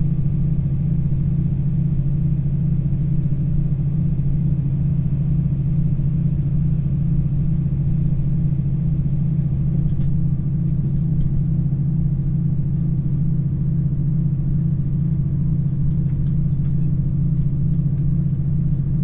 In the train carriage, Train news broadcast sound